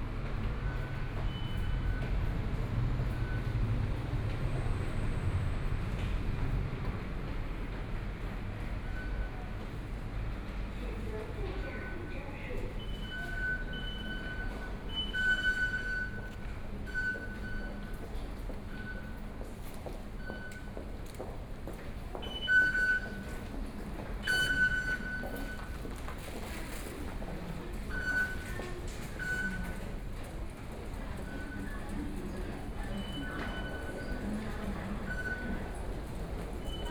{
  "title": "Minquan W. Rd., Taipei City - walking on the Road",
  "date": "2014-02-27 08:33:00",
  "description": "walking on the Road, Traffic Sound, Environmental sounds\nBinaural recordings",
  "latitude": "25.06",
  "longitude": "121.52",
  "timezone": "Asia/Taipei"
}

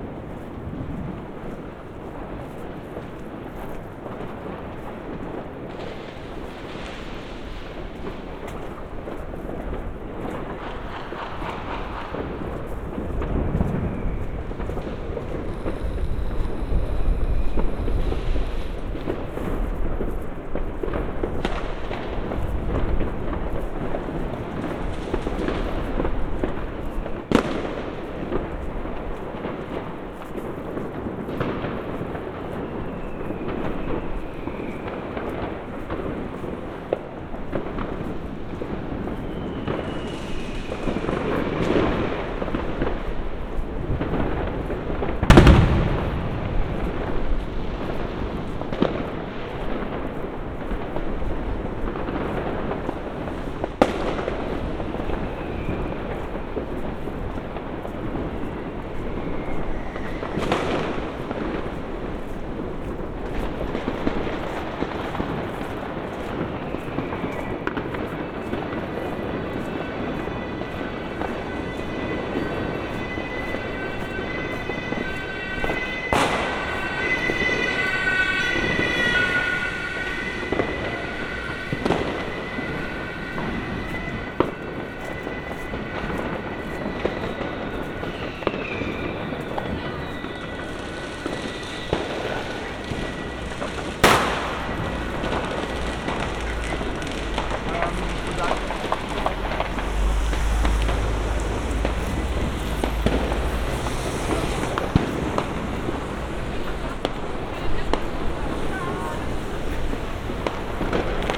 {
  "title": "Rheinstraße, Berlin, Allemagne - New Year Eve Firework",
  "date": "2021-12-31 23:59:00",
  "description": "Street fireworks at the passage of midnight on New Year Eve in the district of Friedenau, Berlin.\nRecorded with Roland R-07 + Roland CS-10EM (binaural in-ear microphones)",
  "latitude": "52.47",
  "longitude": "13.33",
  "altitude": "50",
  "timezone": "Europe/Berlin"
}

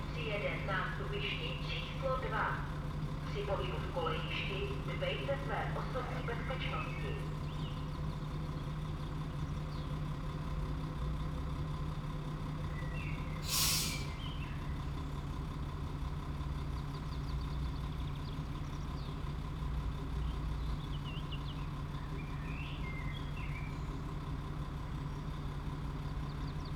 {
  "title": "Branik station; announcements, train in & out, atmosphere, Praha-Braník, Praha, Czechia - Branik station; announcements, train in & out, atmosphere",
  "date": "2022-06-14 13:47:00",
  "description": "Small station atmopshere. There are 4 trains per hour here. A blackbird sings in the mid distance.",
  "latitude": "50.03",
  "longitude": "14.41",
  "altitude": "195",
  "timezone": "Europe/Prague"
}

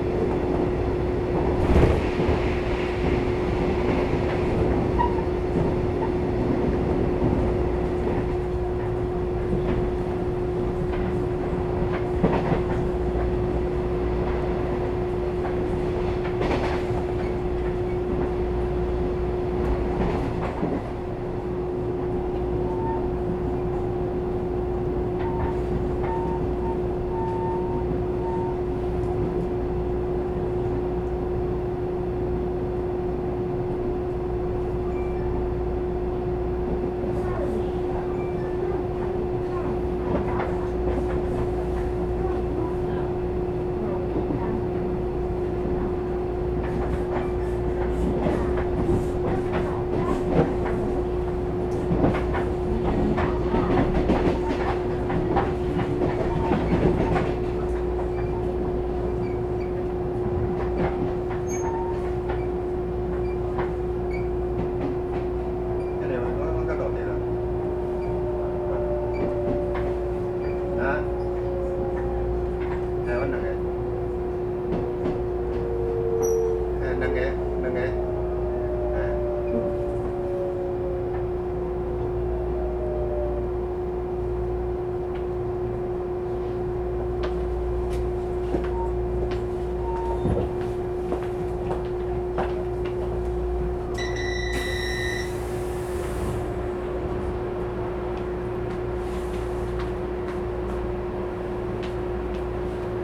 {
  "title": "Luzhu, Kaohsiung - inside the Trains",
  "date": "2012-03-29 14:45:00",
  "description": "from Zhongzhou Station to Luzhu Station, Trains traveling, Train crossing, Train broadcast message, Sony ECM-MS907, Sony Hi-MD MZ-RH1",
  "latitude": "22.87",
  "longitude": "120.26",
  "altitude": "27",
  "timezone": "Asia/Taipei"
}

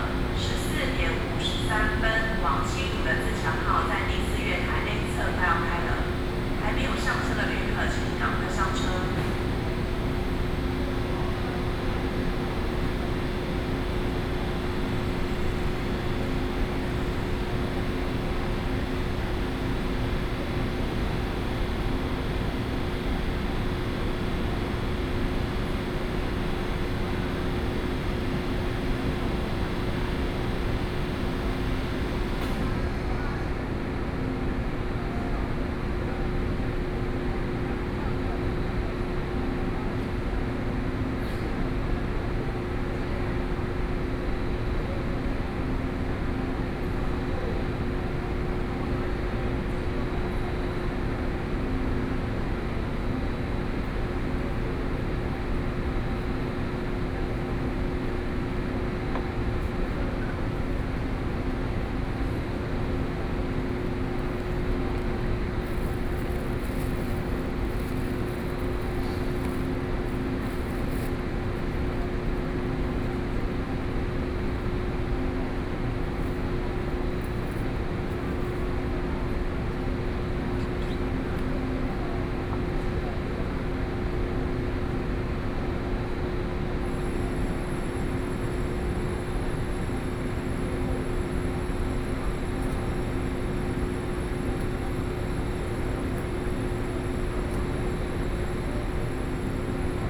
{
  "title": "Taipei Main Station - the platform",
  "date": "2013-09-24 15:01:00",
  "description": "On the platform waiting for the train, Station broadcast messages, Train Arrival and Departure, Sony PCM D50 + Soundman OKM II",
  "latitude": "25.05",
  "longitude": "121.52",
  "altitude": "29",
  "timezone": "Asia/Taipei"
}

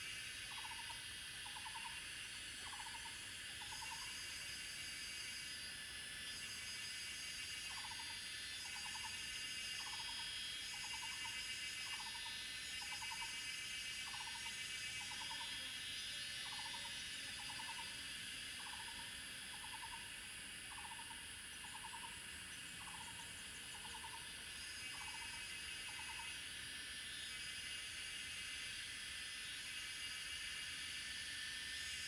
{
  "title": "水上巷, 桃米里 - Cicada and birds sounds",
  "date": "2016-05-17 13:24:00",
  "description": "Cicada sounds, Birds singing, face the woods\nZoom H2n MS+ XY",
  "latitude": "23.93",
  "longitude": "120.90",
  "altitude": "729",
  "timezone": "Asia/Taipei"
}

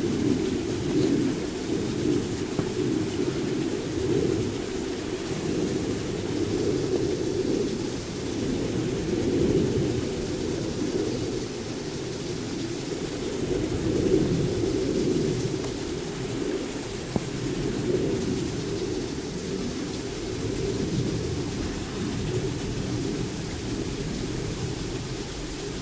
{
  "title": "moitzfeld, haus hardt. plane in the air, steps in the snow",
  "description": "the resonance of a plane above the clouds, while stepping thru the snow near a small stream\nsoundmap nrw - topographic field recordings and social ambiences",
  "latitude": "50.98",
  "longitude": "7.17",
  "altitude": "178",
  "timezone": "Europe/Berlin"
}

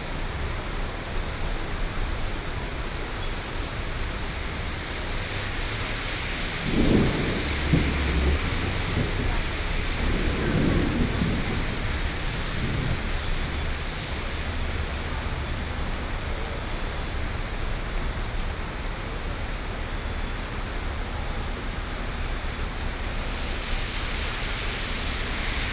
{
  "title": "Taipei, Taiwan - Thunderstorm",
  "date": "2013-07-06 14:52:00",
  "description": "Traffic Noise, Sound of conversation among workers, Sony PCM D50, Binaural recordings",
  "latitude": "25.07",
  "longitude": "121.53",
  "altitude": "13",
  "timezone": "Asia/Taipei"
}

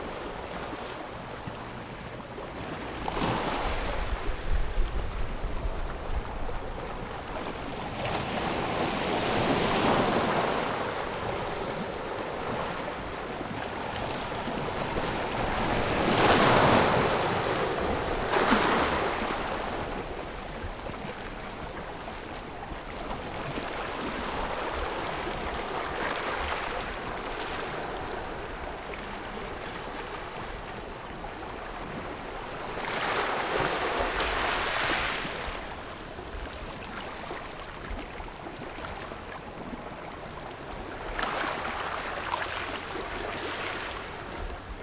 {
  "title": "Jiayo, Koto island - Sound of the waves",
  "date": "2014-10-28 15:19:00",
  "description": "Small beach, Sound of the waves\nZoom H6 + Rode NT4",
  "latitude": "22.06",
  "longitude": "121.51",
  "altitude": "6",
  "timezone": "Asia/Taipei"
}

